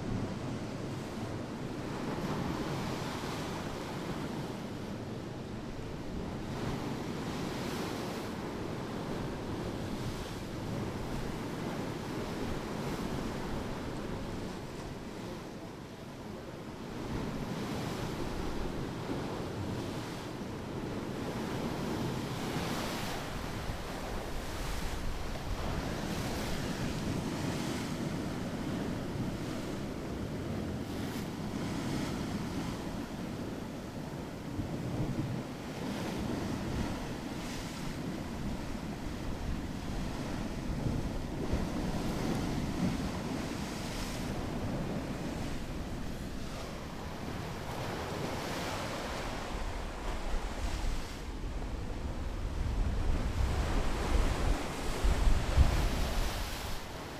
so direct..so strong so blue
Red Sea Governate, Egypt